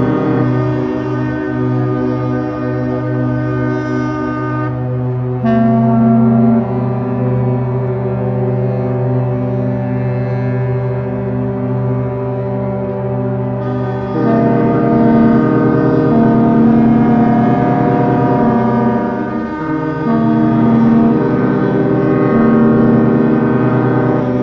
Port of Piraeus - Ships Horns Concert for Epiphany
Ship Horn Tuning.
For the Epiphany, boat make sounds their horn at the same time in the port of Athens, Greece.
Recorded by a AB stereo setup B&K 4006 in Cinela Leonard windscreen
Sound Devices 633 recorder
Recorded on 6th of january 2017 in Pyraeus Port
Περιφέρεια Αττικής, Αποκεντρωμένη Διοίκηση Αττικής, Ελλάδα, 6 January